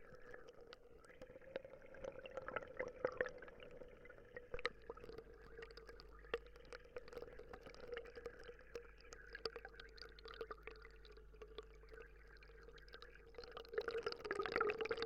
Minnehaha Avenue, Takapuna, Auckland, New Zealand - Outflow of Lake Pupuke, contact mic
Contact microphone immersed in the outflow among lava field